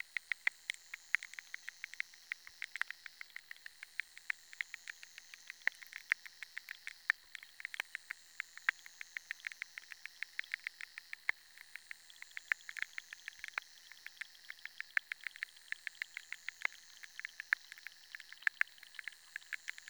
Utena, Lithuania, underwater insects musicians